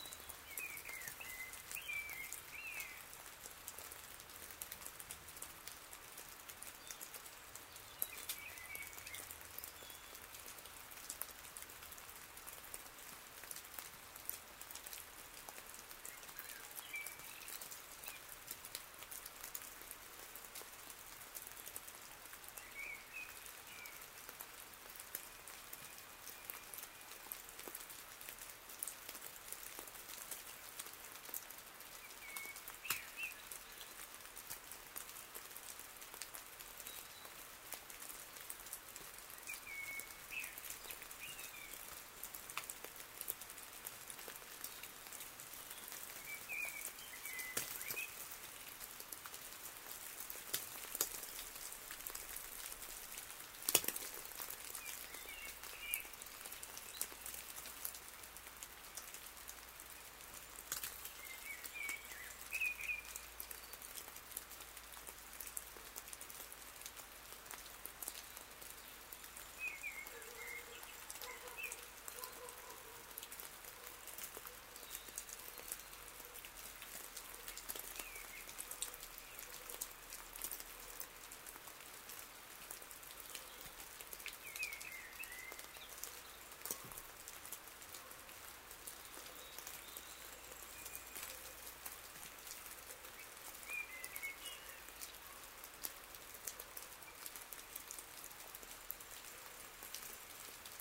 Le Fau, France - A long night of snowfall, sleet and hail
During a long night and an early morning, a shower of snow falls on a small hamlet named Le Fau, in the Cantal mountains. We have to wait very long time before continuing the hike. Recorded at the end of the night, near the cheese factory of Jacques Lesmarie.